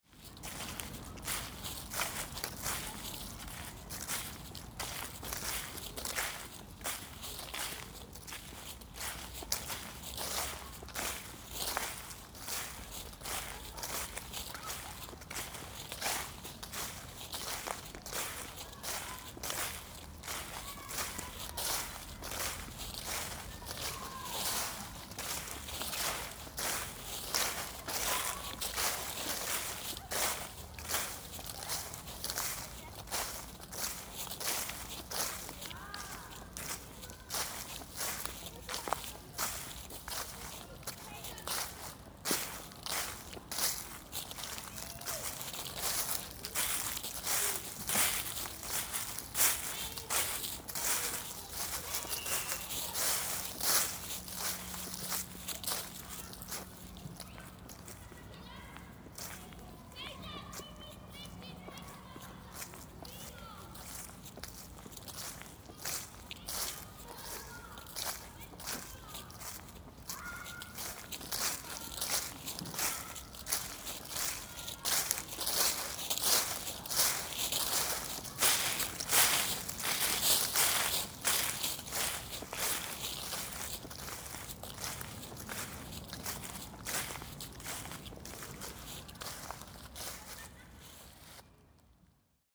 Leaves are falling and lie thick after a spectacularly colourfull autumn. Mostly these are yellow lime leaves but later the drier crackles are from brown maple and poplar leaves.
Berlin, Germany